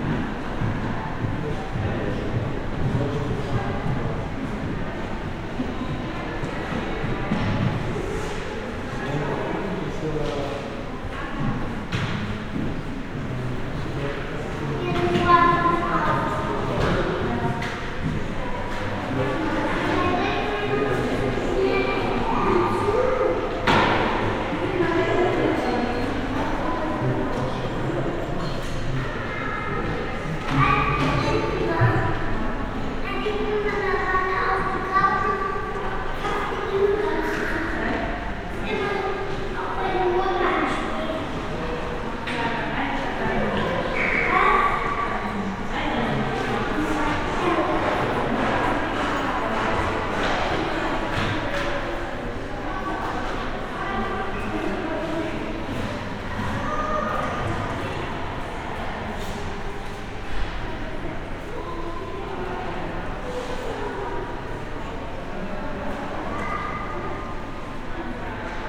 frankfurt, entrance to kunsthalle - the city, the country & me: schirn art gallery, entrance hall
entrance hall, voices and steps
the city, the country & me: september 27, 2013
Frankfurt, Germany, 27 September 2013